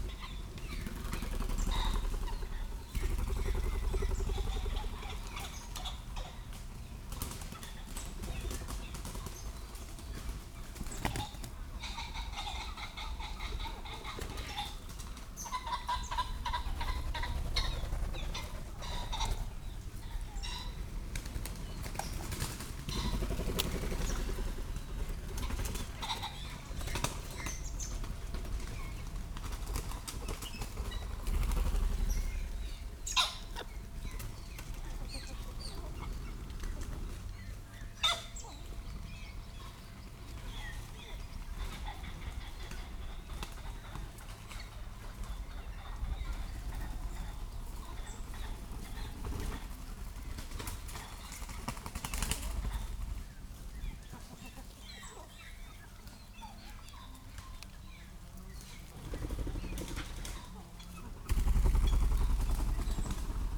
Green Ln, Malton, UK - Pheasants coming to roost ...
Pheasants coming to roost ... open lavalier mics clipped to sandwich box on tree trunk ... bird calls from robin ... blackbird ... crow ... tawny owl ... plenty of background noise ... females make high pitched peeps ... males hoarse calls ... and plenty of whirrings and rattling of wings when they fly to roost ...